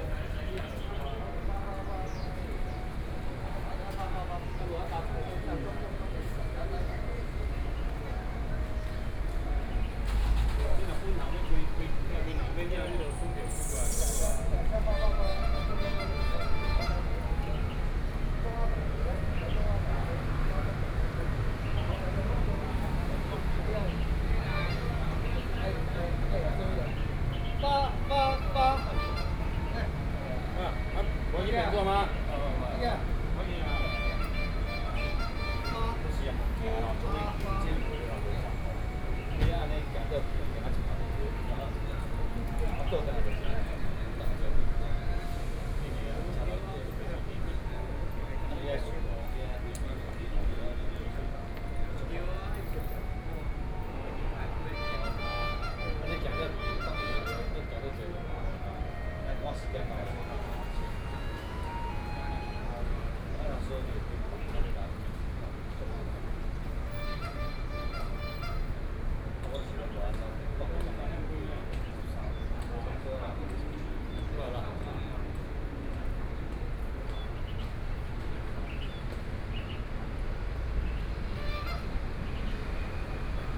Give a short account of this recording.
The square next to the station, Taxi driver rest area, Garbage truck arrived, Zoom H4n+ Soundman OKM II